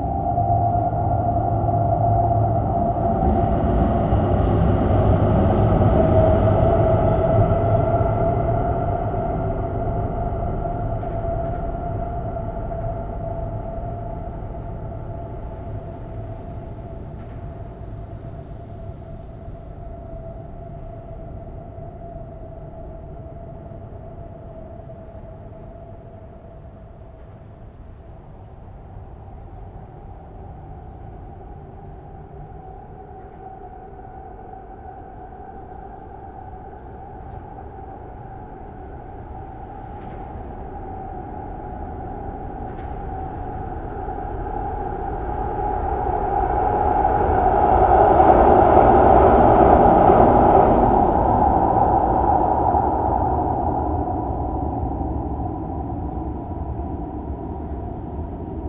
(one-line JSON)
{"title": "Bonn, Alemania - The Bridge", "date": "2021-06-14 16:30:00", "description": "Recorded under the edge between concrete and metal parts of the brigde.", "latitude": "50.72", "longitude": "7.14", "altitude": "59", "timezone": "Europe/Berlin"}